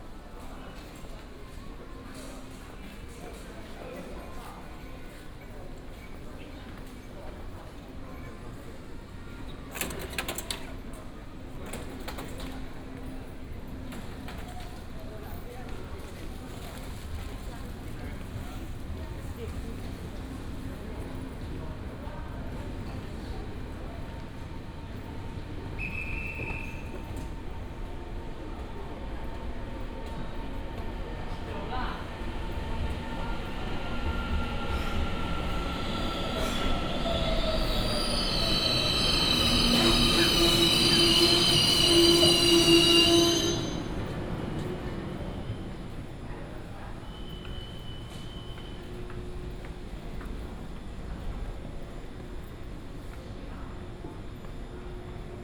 walking in the Station, To the station platform